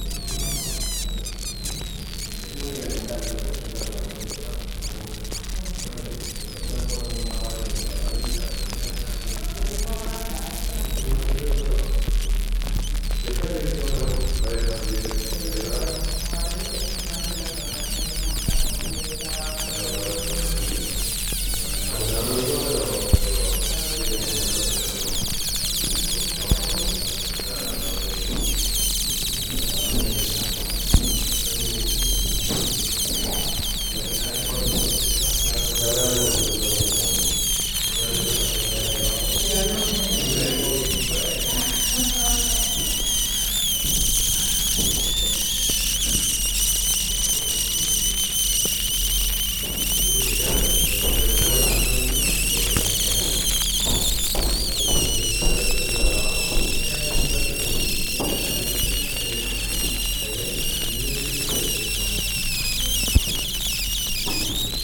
spontaneous fermentation at cantillon brewery, brussels
a close-up recording of spontaneous fermentation, as the cantillon brewerys lambic ferments in a large oak cask, and gas and foam escapes around the wooden plug in the top.
Anderlecht, Belgium, 14 January 2012